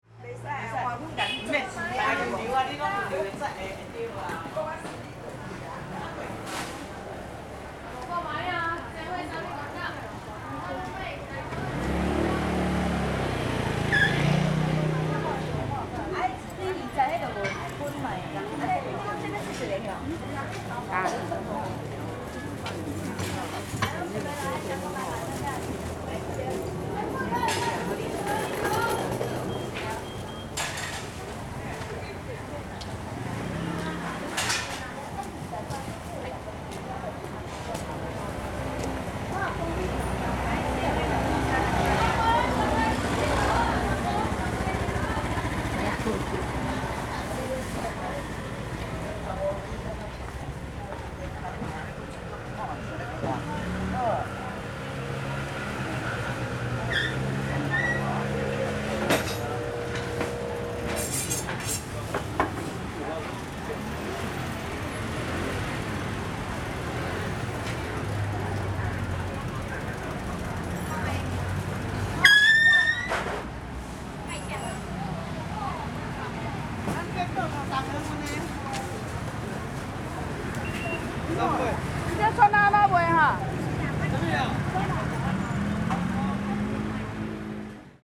Changshou St., Sanchong Dist., New Taipei City - Walking in the traditional market
Walking in the traditional market
Sony Hi-MD MZ-RH1 +Sony ECM-MS907